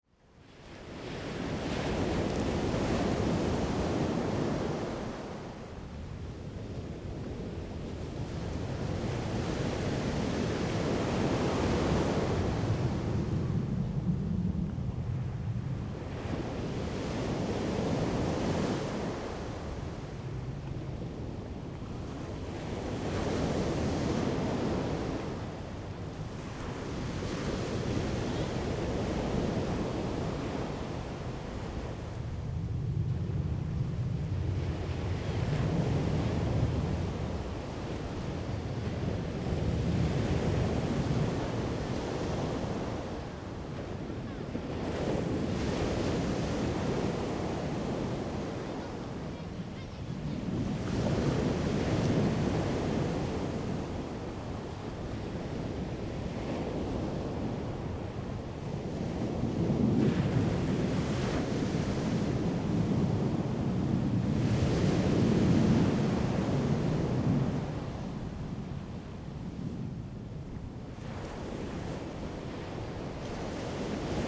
{"title": "Sioulin Township, Hualien County - Sound of the waves", "date": "2014-08-27 14:26:00", "description": "Sound of the waves, Aircraft flying through, The weather is very hot\nZoom H2n MS+XY", "latitude": "24.11", "longitude": "121.64", "altitude": "1", "timezone": "Asia/Taipei"}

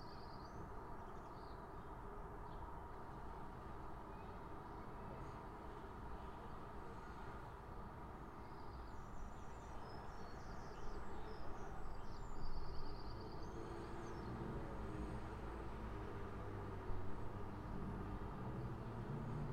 {"title": "Heleny Junkiewicz, Warszawa, Poland - Targówek", "date": "2018-04-16 07:54:00", "description": "East side of Warsaw. Recorded from the 10th floor flat.\nsoundDevices MixPre-6 + Audio Technica BP4025 stereo microphone.", "latitude": "52.27", "longitude": "21.06", "altitude": "85", "timezone": "Europe/Warsaw"}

